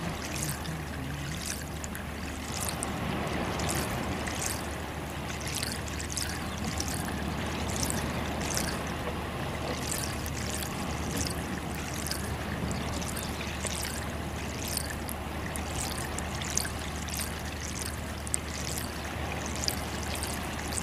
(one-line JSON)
{"title": "Niaqornat, Grønland - Melt water", "date": "2013-06-21 14:00:00", "description": "The trickle of melt water in the pipe, leading to the fresh water supply of the village. Recorded with a Zoom Q3HD with Dead Kitten wind shield.", "latitude": "70.79", "longitude": "-53.66", "altitude": "18", "timezone": "America/Godthab"}